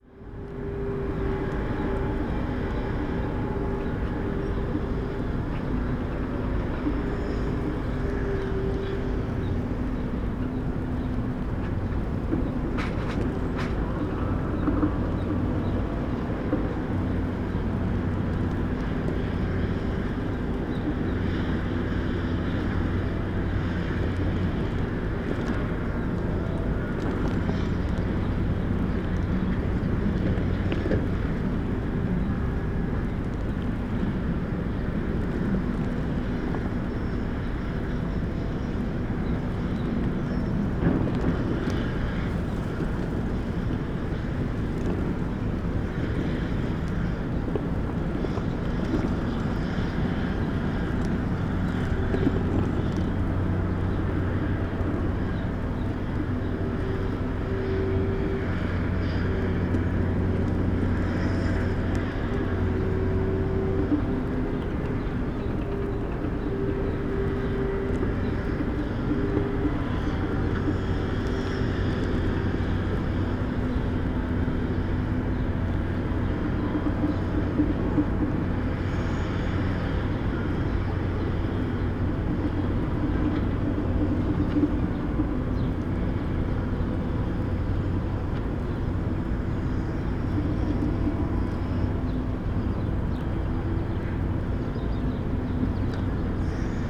berlin, aronstraße: kleingartenkolonie friedenstal, hauptweg - A100 - bauabschnitt 16 / federal motorway 100 - construction section 16: allotment
windblown tarp, different excavators, bulldozers and trucks during earthwork operations
april 16, 2015